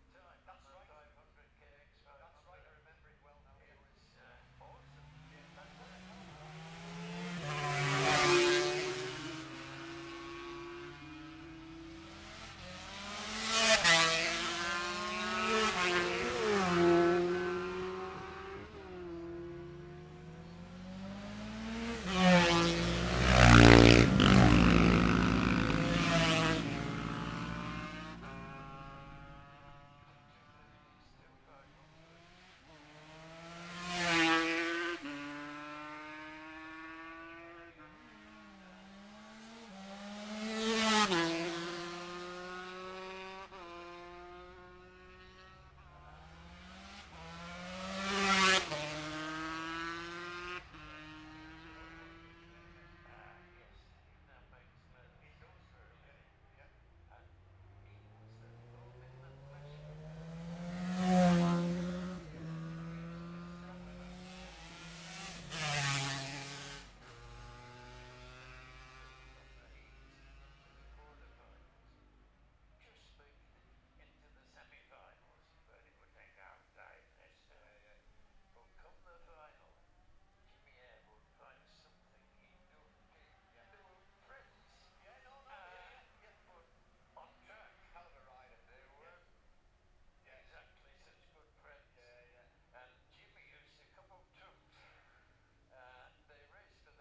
Jacksons Ln, Scarborough, UK - gold cup 2022 ... lightweight practice ...
the steve henshaw gold cup 2022 ... lightweight practice ... dpa 4060s on t-bar on tripod to zoom f6 ...